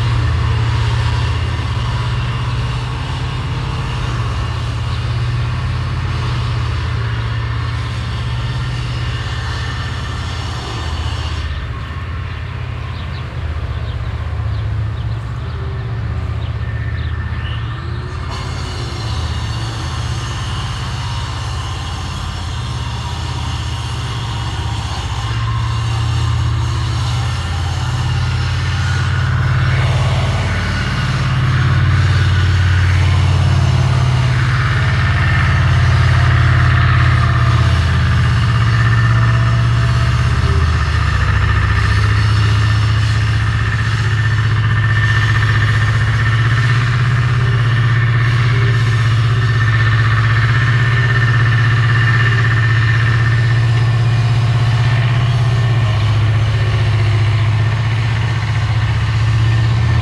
Arbeitsgeräusche im Ort. In der Senke auf einem Bauernhof wird mit einem Traktor Heu in die Scheune eingebracht. Auf einem Hügel wird parallel Holz geschnitten.
Work sounds in the village. In a valley at a farmhouse a tractor transports hay into the stable. On a hill a man saws wood.